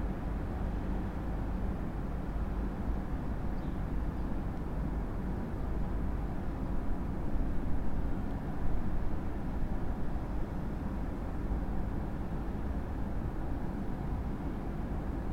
Dovozna cesta, Maribor, Slovenia - corners for one minute
one minute for this corner: Dovozna cesta
20 August, 19:02